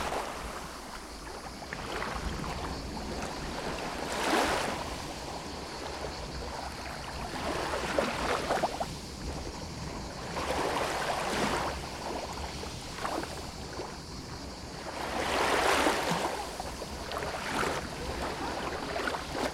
Troulos Beach, Skiathos, Greece - Troulos Bay Beach Skiathos Greece
Waves lapping around my feet. Quite a bit of wind noise but I was in a paradise. Tascam DR-40x
Αποκεντρωμένη Διοίκηση Θεσσαλίας - Στερεάς Ελλάδος, Ελλάς, 24 June, 15:30